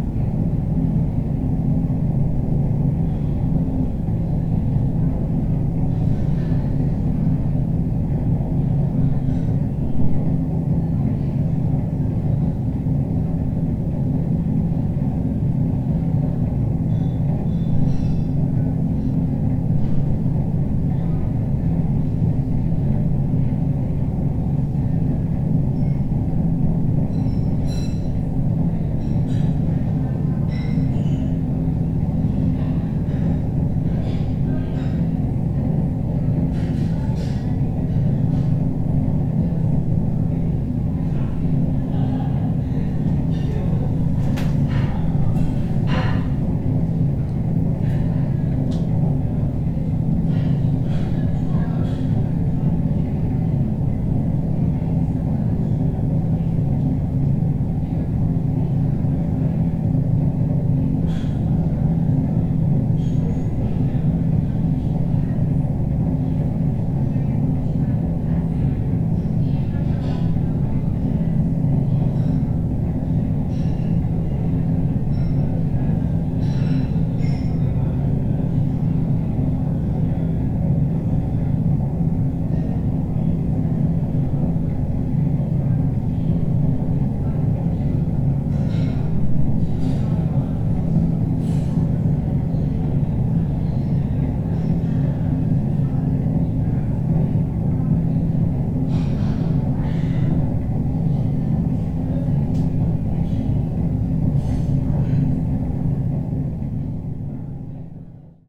Beera Meiselsa, Kraków, Polska - Chalka Restobar restroom
restroom in the back of the bar with a very noisy air outlet. the place was very busy thus many conversations can be heard as well as sounds from the kitchen, mainly used dishes being washed. (roland r-07)
województwo małopolskie, Polska, July 2022